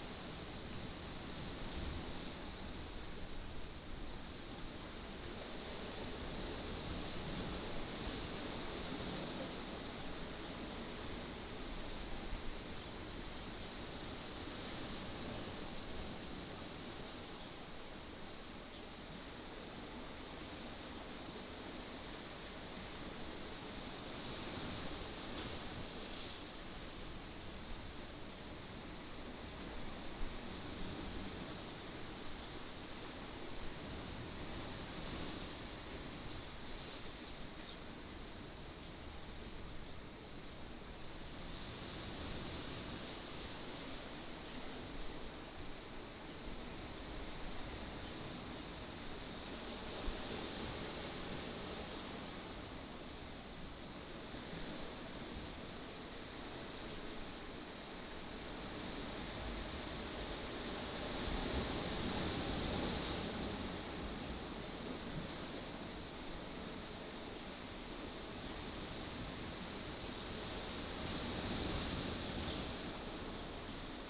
梅石村, Nangan Township - sound of the waves
sound of the waves, small village
福建省 (Fujian), Mainland - Taiwan Border